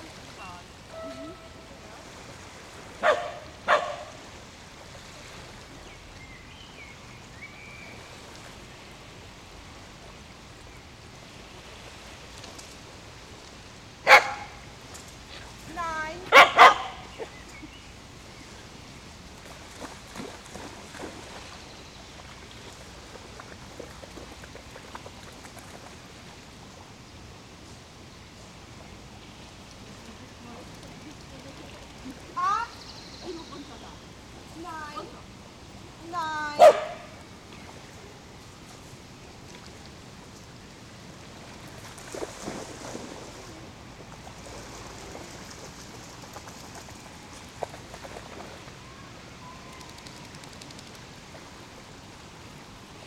Am Molenkopf, Köln, Deutschland - walking the dog
In the Cranach Wäldchen near the shore of the Rhein, people talking, dog plays in the water, barking, wind in the trees.